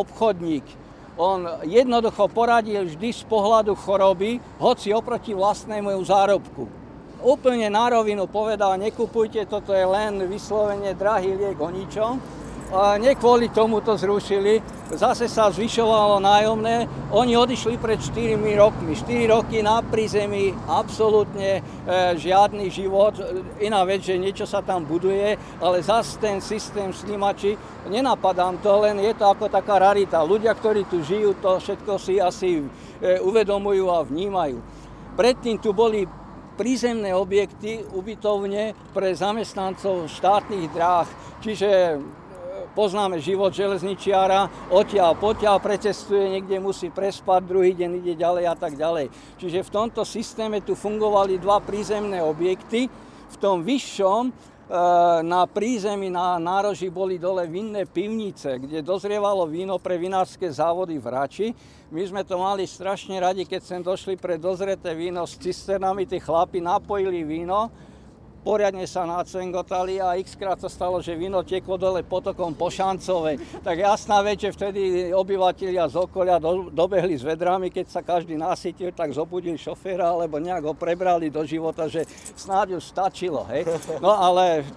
Pri Lacinke
Unedited recording of a talk about local neighbourhood.